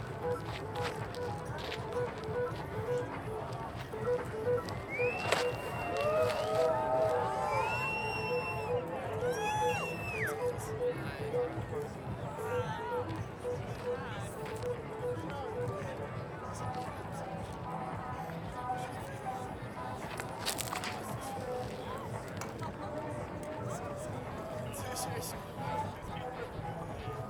ish, South Africa - Pipe Dreams Burn
Inner perimeter perspective of the burning oif the art piece Pipe Dreams at 2019 Afrikaburn. Recorded in ambisonic B Format on a Twirling 720 Lite mic and Samsung S9 android smartphone
1 April 2019, 20:36